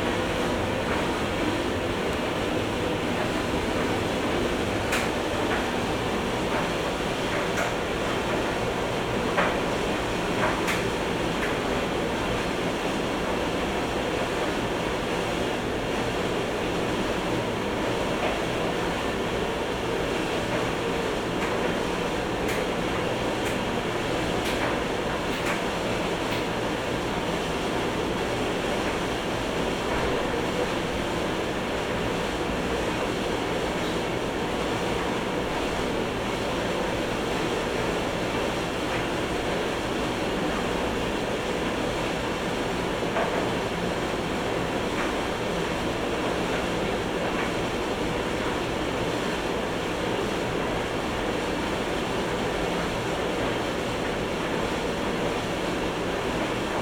{"title": "workum, het zool: marina building, laundry room - the city, the country & me: laundry room of marina building", "date": "2012-08-04 19:55:00", "description": "tumble dryer in the laundry room\nthe city, the country & me: august 4, 2012", "latitude": "52.97", "longitude": "5.42", "altitude": "255", "timezone": "Europe/Amsterdam"}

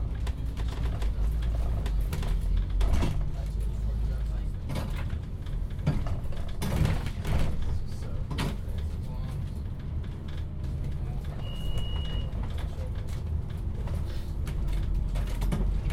{"title": "Sandown, Isle of Wight, UK - Bus noises", "date": "2013-11-29 12:29:00", "description": "Number 3 bus to Ryde rattling along bumpy roads, conversations, some engine noise", "latitude": "50.66", "longitude": "-1.15", "altitude": "16", "timezone": "Europe/London"}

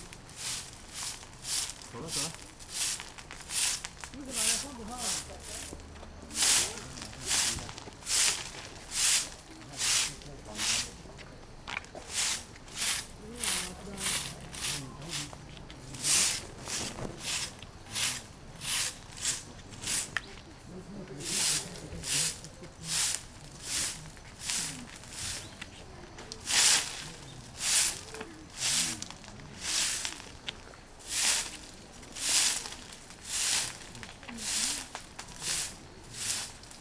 {"title": "Sagaogurayama Tabuchiyamacho, Ukyō-ku, Kyoto, Kyoto Prefecture, Japonia - sweeping", "date": "2018-10-01 10:35:00", "description": "a monk sweeping and washing the sidewalk in front of a shrine. (roland r-07)", "latitude": "35.02", "longitude": "135.67", "altitude": "74", "timezone": "Asia/Tokyo"}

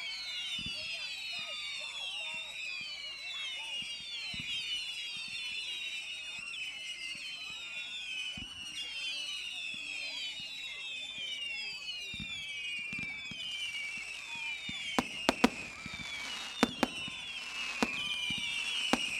{"title": "South Stoke, Oxfordshire, UK - South Stoke Fireworks", "date": "2015-11-06 19:30:00", "description": "A selection of fireworks from 'South Stoke Fireworks Spectacular'. Recorded using the built-in microphones on a Tascam DR-05.", "latitude": "51.55", "longitude": "-1.13", "altitude": "51", "timezone": "Europe/London"}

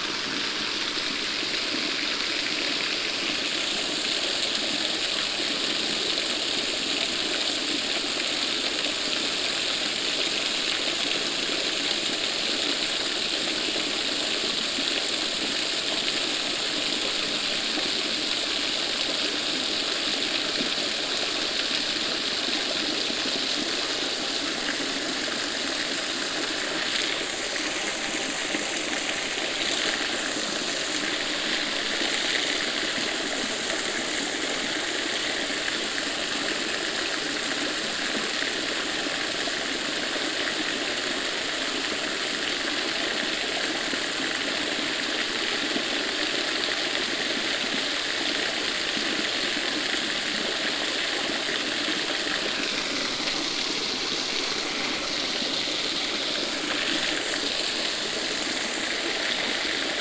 {"title": "caprauna, cascades de fereira, waterfall", "date": "2009-07-27 13:50:00", "description": "a beautiful mid size water fall, here with less water as recorded in the summer\nsoundmap international: social ambiences/ listen to the people in & outdoor topographic field recordings", "latitude": "44.11", "longitude": "7.98", "altitude": "788", "timezone": "Europe/Berlin"}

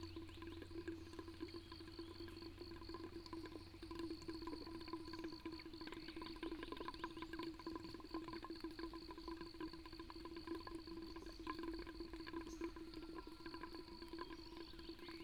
{"title": "水上巷, 埔里鎮桃米里, Taiwan - Water pipes", "date": "2016-04-19 05:40:00", "description": "Water pipes, Bird sounds, Crowing sounds, Sound of insects, Morning road in the mountains", "latitude": "23.94", "longitude": "120.92", "altitude": "550", "timezone": "Asia/Taipei"}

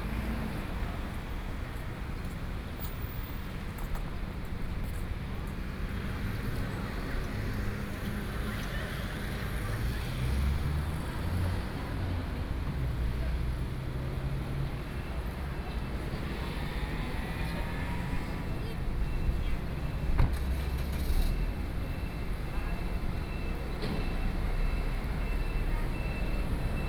{"title": "Puxin - traffic noise", "date": "2013-08-14 11:44:00", "description": "Front of the station's traffic noise, Sony PCM D50+ Soundman OKM II", "latitude": "24.92", "longitude": "121.18", "timezone": "Asia/Taipei"}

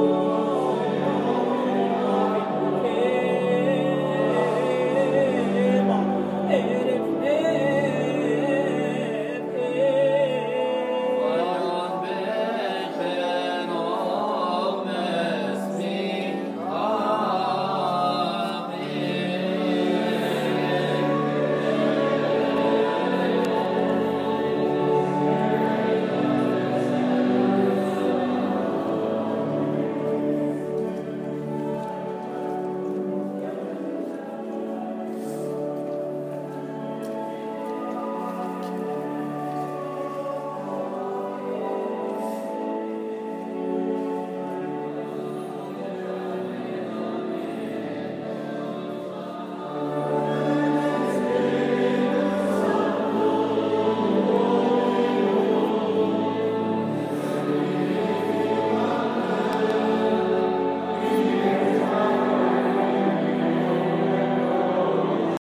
{"title": "Jerusalem, Holy Sepulcher - Morning Mass (Mess)", "date": "2013-10-23 08:51:00", "description": "I entered into the Holy Sepulcher of Jesus Christ early in the morning without any tourists. Two christian denominations were serving a mess-franciskans and armenians-the result a bit of two level serving of G*d.", "latitude": "31.78", "longitude": "35.23", "altitude": "767", "timezone": "Asia/Hebron"}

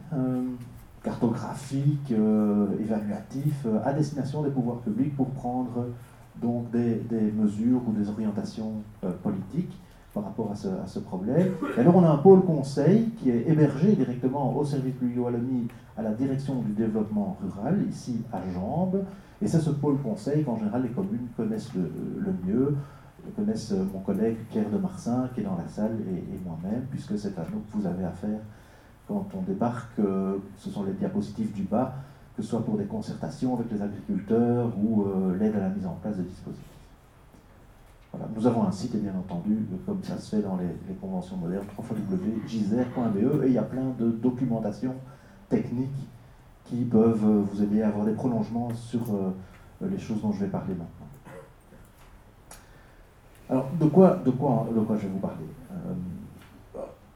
{"title": "Namur, Belgique - Seminar", "date": "2016-04-19 10:40:00", "description": "A seminar about agricultural erosion, flooding and sludge disasters. Orator is very specialized in this thematic.", "latitude": "50.45", "longitude": "4.87", "altitude": "80", "timezone": "Europe/Brussels"}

